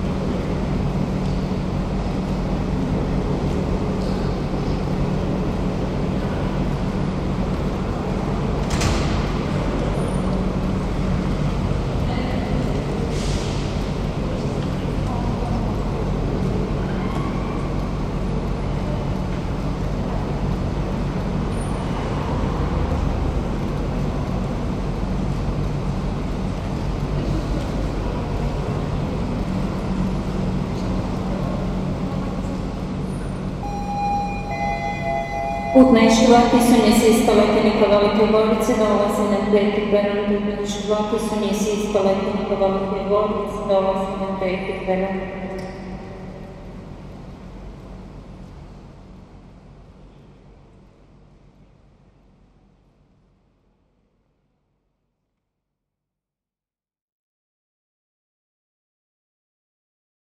Main railway station Zagreb
kolodvor, main hall, part of the EBU sound workshop
City of Zagreb, Croatia, June 2010